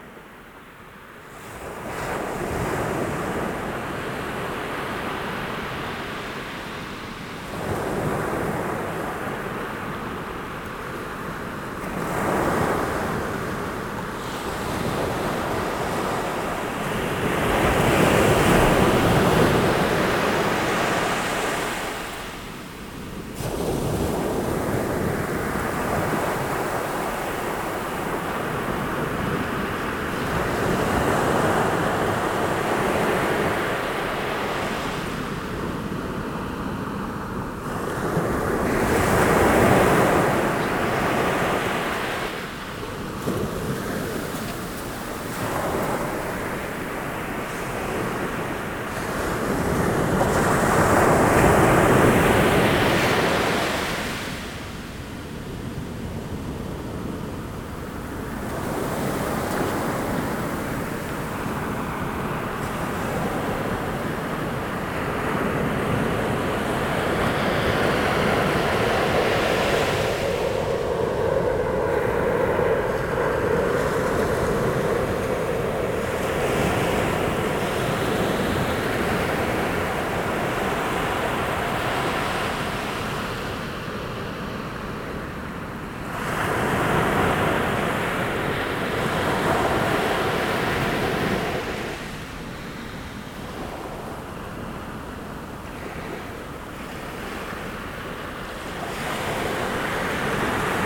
Noordwijk, Nederlands - The sea
Noordwijk-Aan-Zee, the sea at Kachelduin.
Noordwijk, Netherlands